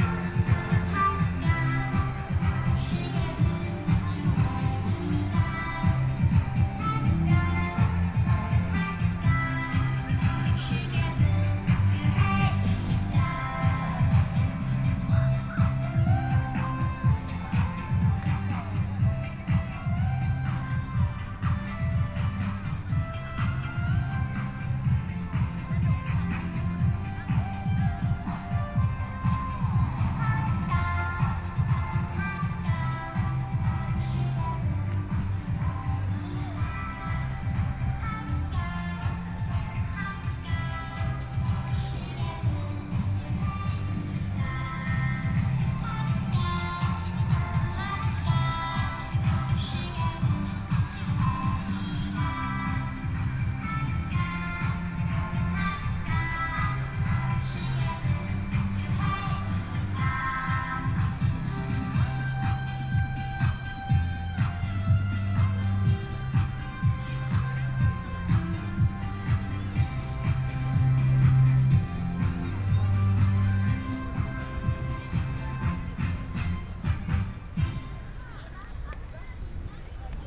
Taipei City Hakka Cultural Park - Walking in the park
Yimin Festivtal, Fair, Binaural recordings, Sony PCM D50 + Soundman OKM II